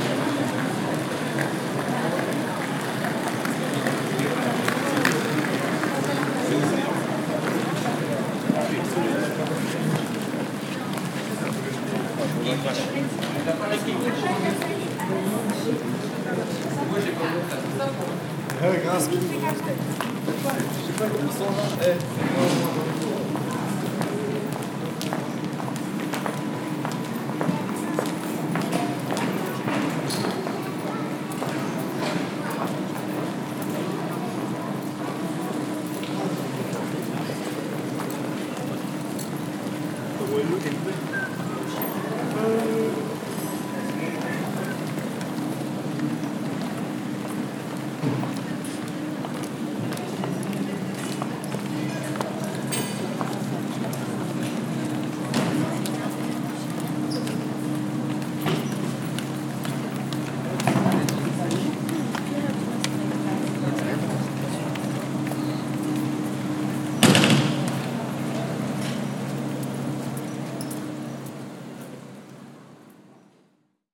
Aeroport, Barcelona, Spain - (-201) Airport walks
Recording of an airport ambiance.
Recorded with Zoom H4